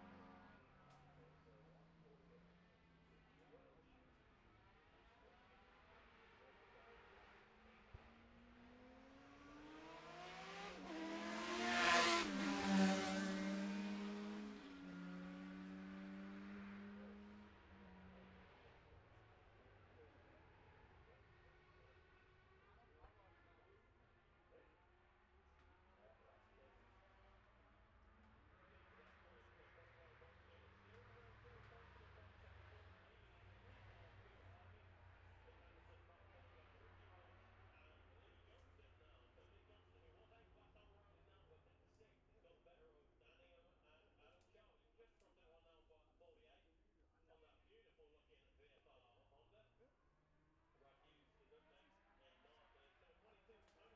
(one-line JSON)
{"title": "Jacksons Ln, Scarborough, UK - olivers mount road racing ... 2021 ...", "date": "2021-05-22 15:18:00", "description": "bob smith spring cup ... ultra-lightweights race 1 ... dpa 4060s to MixPre3 ... mics clipped to twigs in a tree some 5m from track ...", "latitude": "54.27", "longitude": "-0.41", "altitude": "144", "timezone": "Europe/London"}